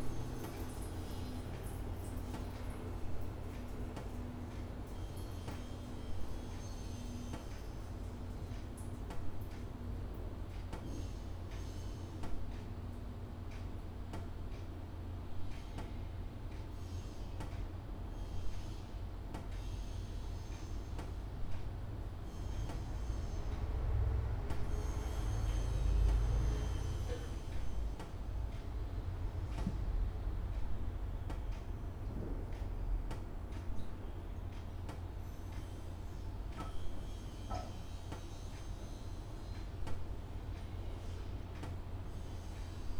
neoscenes: drippy faucet and clock
Dawes Point NSW, Australia